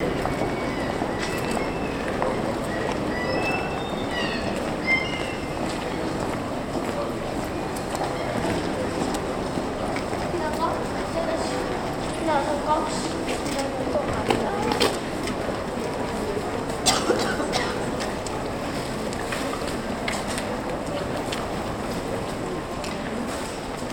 birds, kids, Viru street, Tallinn
birds, kids, street, Tallinn